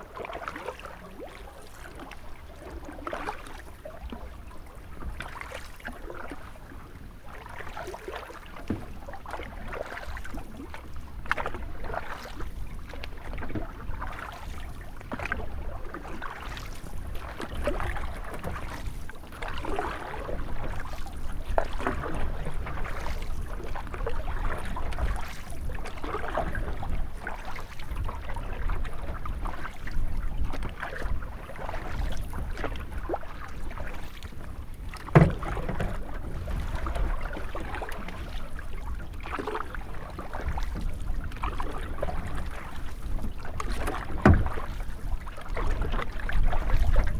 Canoeing around midsommar on a swedish lake, Olympus LS-14
Västra Götalands län, Sverige